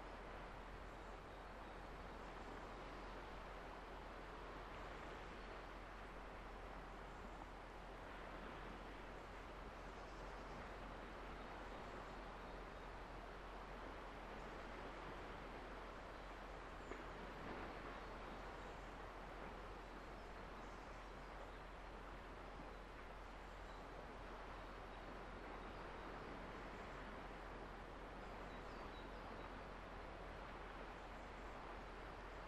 villa roccabella le pradet
surplombant la méditerranée
Le Pradet, France